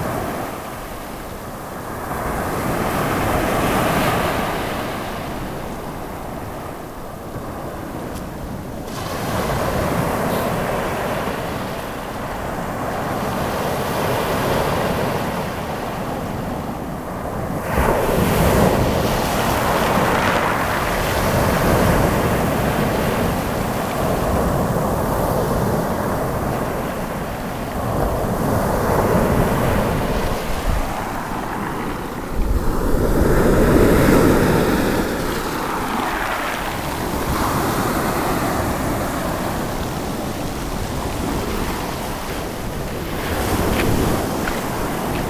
albenga, seaside, surf
the surf at albenga's stony beach in the evening time
soundmap international: social ambiences/ listen to the people in & outdoor topographic field recordings